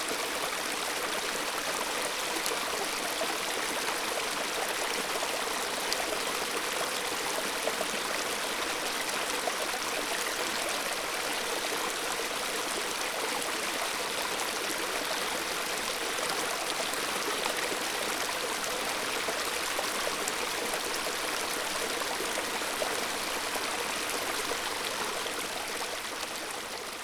Lithuania, Utena, at the fallen branches